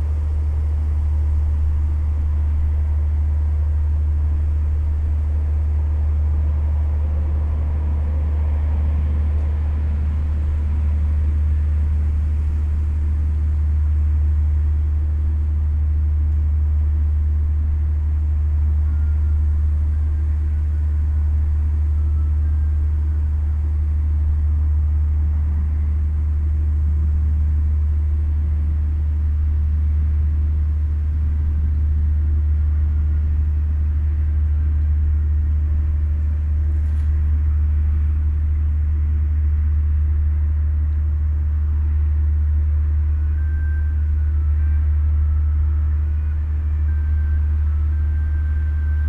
Köln Deutz, Germany - ship drone, traffic and a radio
the heavy drone of a passenger ship 100m away is still very present, and mixes with traffic sounds from the nearby Severins-bridge. a radio is playing somewhere on the boat in front of me.
(Sony PCM D50, DPA4060)